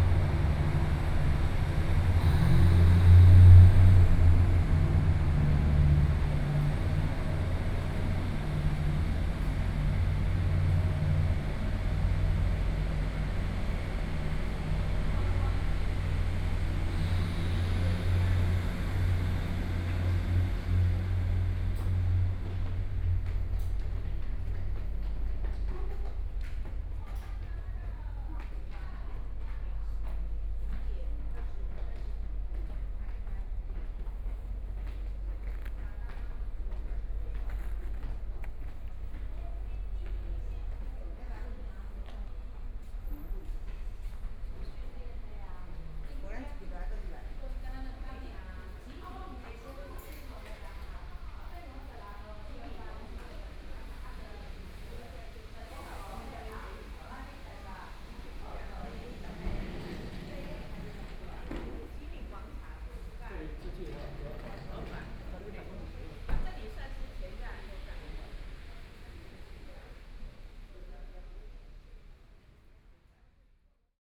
Walked through the underpass from the station platform to station exit, Binaural recordings, Zoom H4n+ Soundman OKM II
Yilan County, Taiwan, November 2013